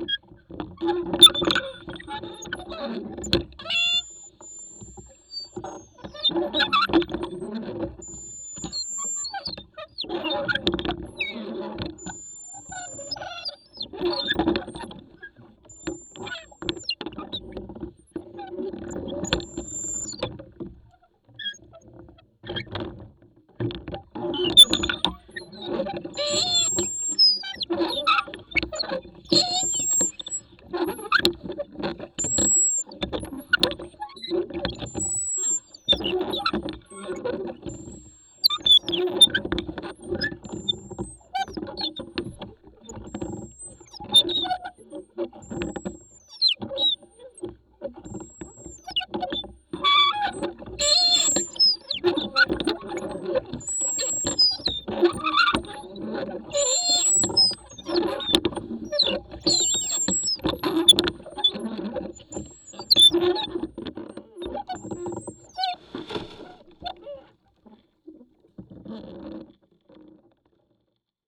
Lithuania. lake Rubikiai, a scull
recorded with contact microphones. Unnerving sound move nets of the paddle on the scull.